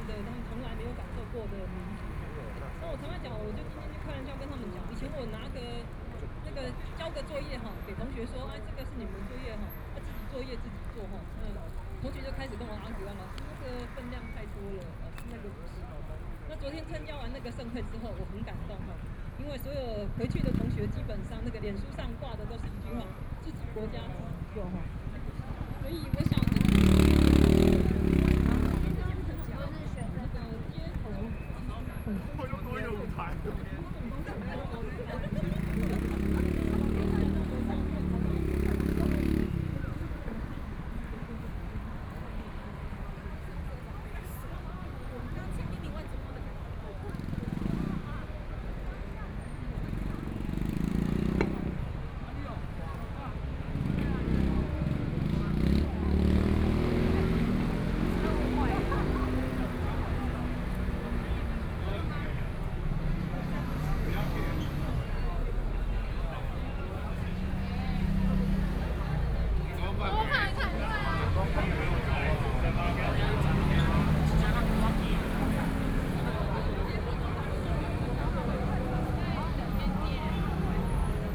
中正區幸福里, Taipei City - Street Forum
Walking through the site in protest, Traffic Sound, People and students occupied the Legislature, A group of students and university professors sitting in the park solidarity with the student protest movement
Binaural recordings
Taipei City, Taiwan, 21 March 2014